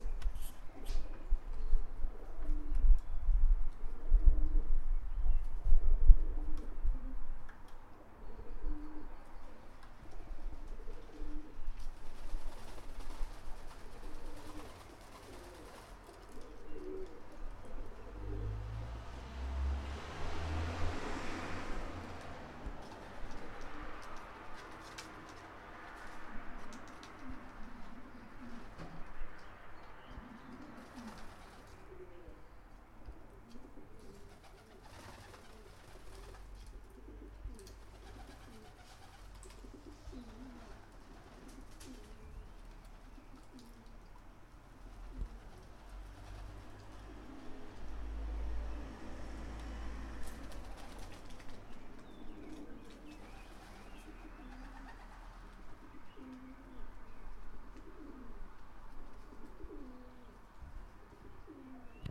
Halit Al Dir St, Nazareth, Israel - Pigeon fight
Flock of pigeons ruffling their wings trying not to slip off of wet plywood
מחוז הצפון, ישראל